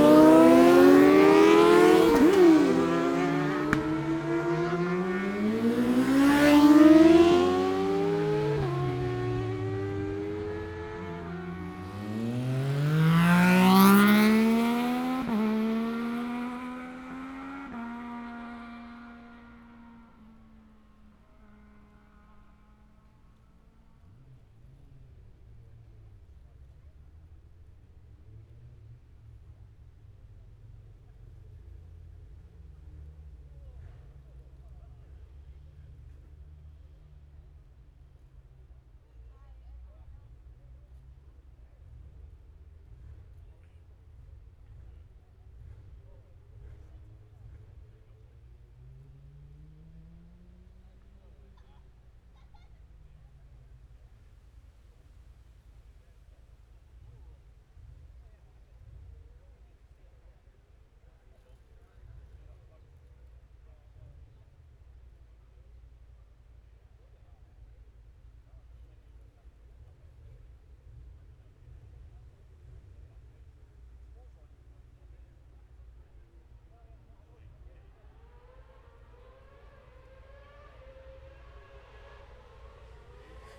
Scarborough District, UK - Motorcycle Road Racing 2016 ... Gold Cup ...
600 cc odds practice ... Mere Hairpin ... Oliver's Mount ... Scarborough ... open lavalier mics clipped to baseball cap ...